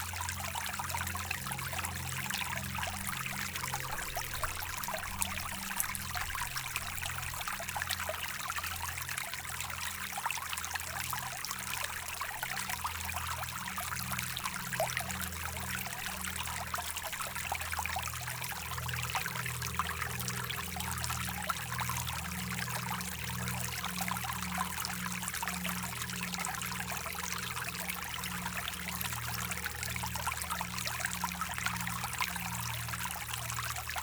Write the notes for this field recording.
A few kilometers after the spring, after being a stream, now the Seine is a very small river, flowing gaily in the pastures.